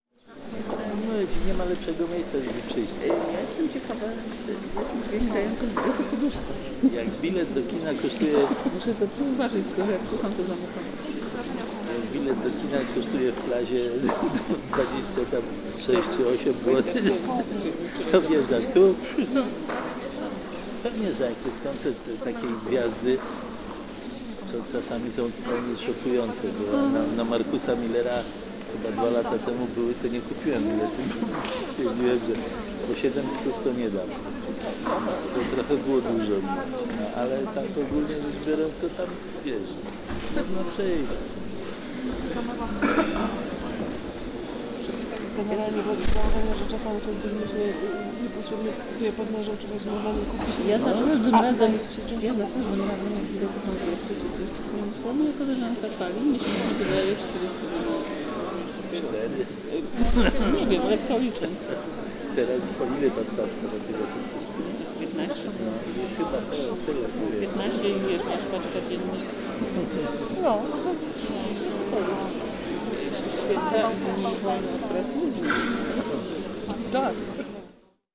NOSPR, Katowice, Poland - (102 BI) Talks before concert at NOSPR
Binaural recording of a crowd gathering before contemporary music concert at NOSPR.
Recorded with Soundman OKM on Sony PCM D100
województwo śląskie, Polska, 5 March